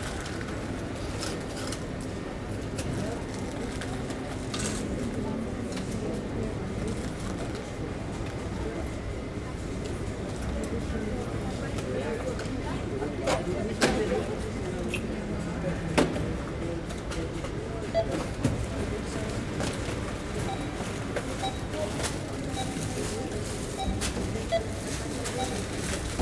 Gesundbrunnencenter - Realmarkt, Kasse
18.03.2009 20:00 REAL supermarket, at the cash, closing hour.
Berlin, Germany, 18 March